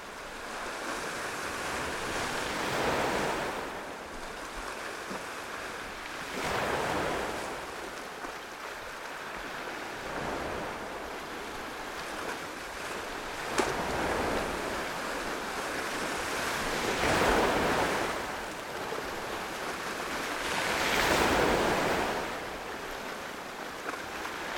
Karya Beach Camp, night time, sounds of waves